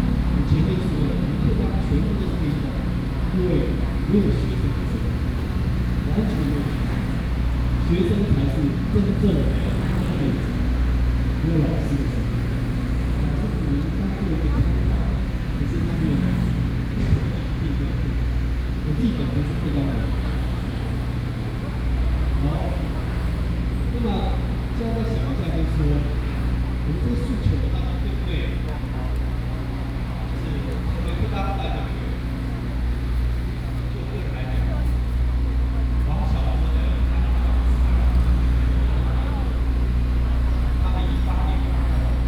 {"title": "Zhongshan S. Rd., Zhongzheng Dist., Taipei City - Protest site", "date": "2015-08-01 19:04:00", "description": "Protest site\nPlease turn up the volume a little. Binaural recordings, Sony PCM D100+ Soundman OKM II", "latitude": "25.04", "longitude": "121.52", "altitude": "13", "timezone": "Asia/Taipei"}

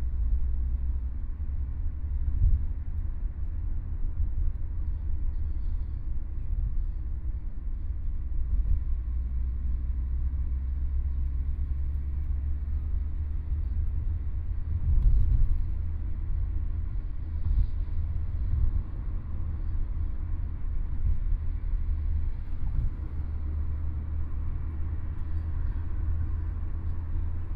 {"title": "The Free Online Dictionary and Encyclopedia, Shanghai - in the Taxi", "date": "2013-11-20 15:02:00", "description": "On the highway, Binaural recording, Zoom H6+ Soundman OKM II", "latitude": "31.17", "longitude": "121.69", "altitude": "5", "timezone": "Asia/Shanghai"}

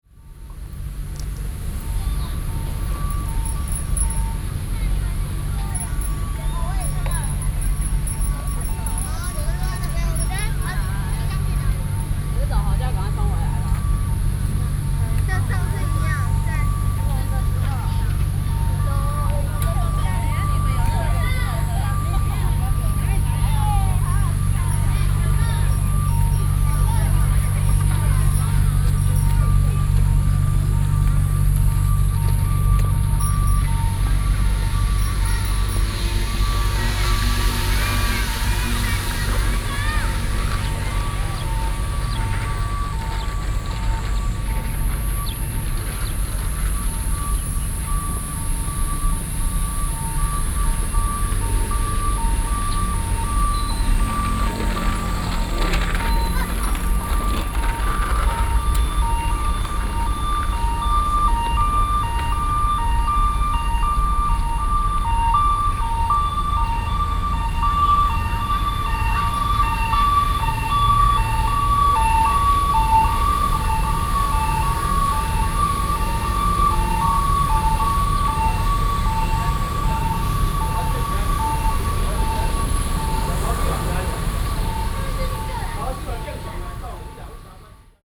{"title": "台灣碧砂公園 - 碧砂公園", "date": "2012-06-24 16:46:00", "description": "In the park, Traffic noise, The child is skateboarding, Ambulance traveling through, Sony PCM D50 + Soundman OKM II, ( SoundMap20120624- 68)", "latitude": "25.15", "longitude": "121.78", "altitude": "8", "timezone": "Asia/Taipei"}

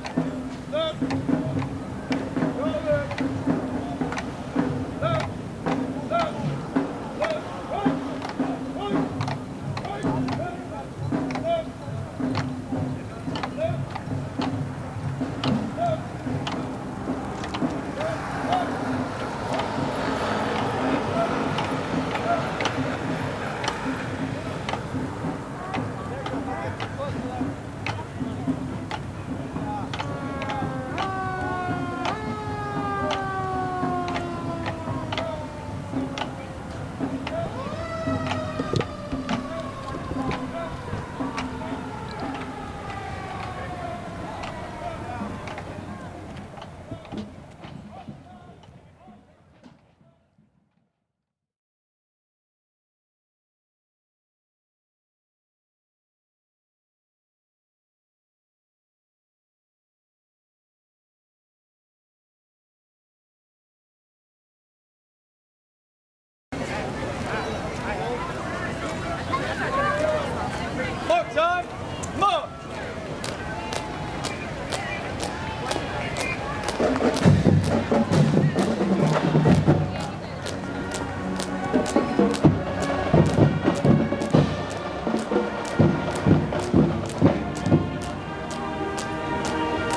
{"title": "Memorial Day 2011 in front of the National Archives", "date": "2011-05-30 15:36:00", "description": "Memorial Day parade, Washington DC, National Archives, muscle cars, soldiers, drums, bands, kids, water hydrant, police sirens", "latitude": "38.89", "longitude": "-77.02", "altitude": "2", "timezone": "America/New_York"}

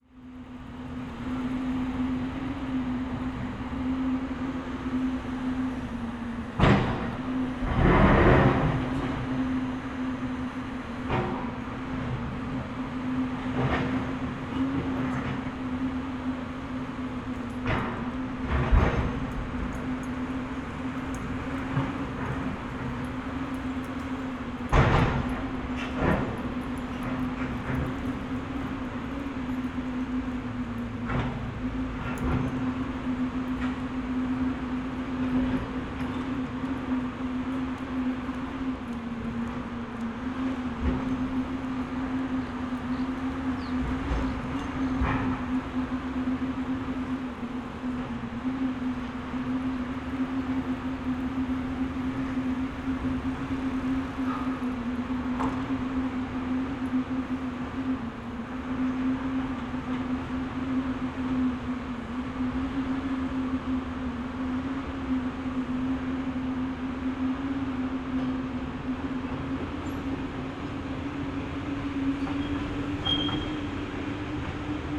{"title": "Mauerweg, Wohlgemuthstr., Berlin - demolition of buildings", "date": "2013-08-17 11:20:00", "description": "Saturday, noon, Mauerweg (former Berlin wall area), Wohlgemuthstr., demolition of garages, reflections and drone of excavator\n(SD702, Audio Technica BP4025)", "latitude": "52.46", "longitude": "13.48", "altitude": "37", "timezone": "Europe/Berlin"}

